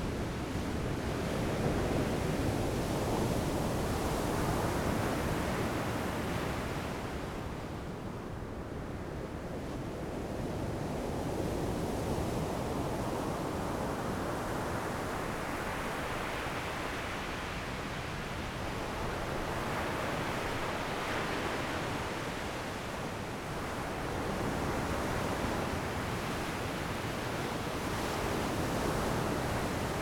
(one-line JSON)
{"title": "台東市, Taiwan - The beach at night", "date": "2014-01-16 18:43:00", "description": "Sitting on the beach, The sound of the waves at night, Zoom H6 M/S", "latitude": "22.75", "longitude": "121.16", "timezone": "Asia/Taipei"}